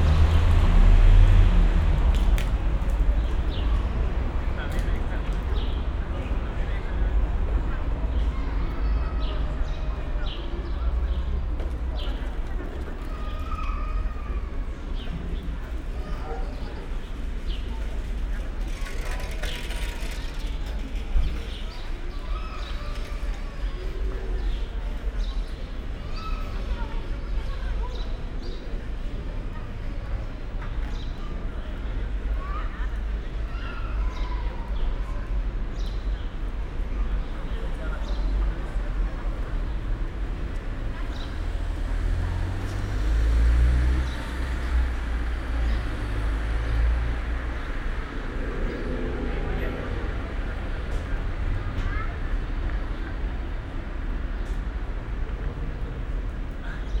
berlin: friedelstraße - the city, the country & me: late afternoon ambience
cars, cyclists, tourists
the city, the country & me: july 7, 2013
Berlin, Germany, 5 July 2013